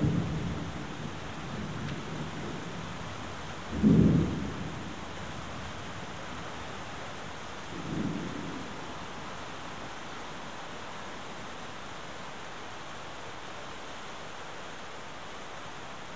Evening thunder shower in my neighborhood.